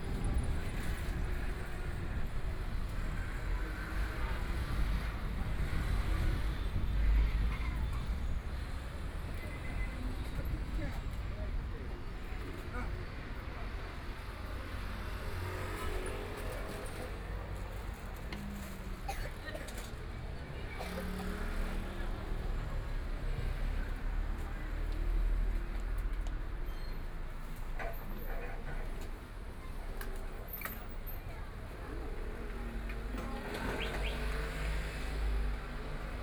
Zhejiang Road, Zhabei District - on the street
Various sounds on the street, Traffic Sound, Bicycle brake sound, Trumpet, Brakes sound, Footsteps, Bicycle Sound, Motor vehicle sound, Binaural recording, Zoom H6+ Soundman OKM II